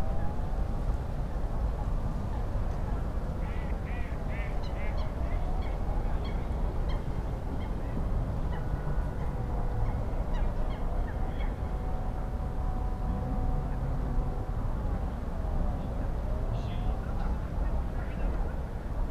Tineretului Park, București, Romania - Winter Early Evening Ambience in Tineretului Park
A stroll through Tineretului Park in the early evening of January 21st, 2019: nature sounds combined with traffic hum in the background, police & ambulance sirens, close footsteps and voices of passerby. Using a SuperLux S502 ORTF Stereo Mic plugged into Zoom F8.